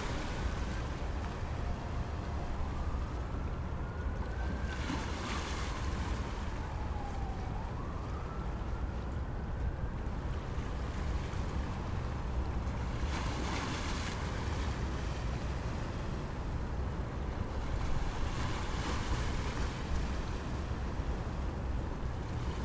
Plymouth St, Brooklyn, NY, USA - Brooklyn Bridge Park
Brooklyn Bridge Park.
Sounds of the river mixed with the traffic from the bridge.